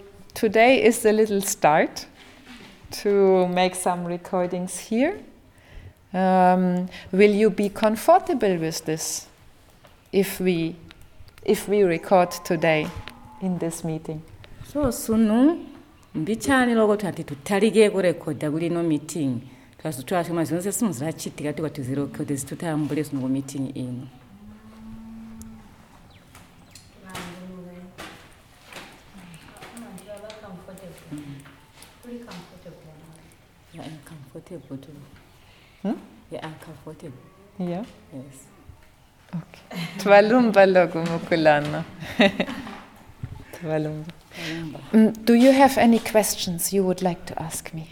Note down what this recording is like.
…i’m introducing the documentation project to the women of Sikalenge Women’s Forum… in each of our meetings with one Zubo’s six Women’s Forums, we were taking time for this introduction so that our project would slowly take root in the communities at large… Zubo Trust is a women’s organization bringing women together for self-empowerment.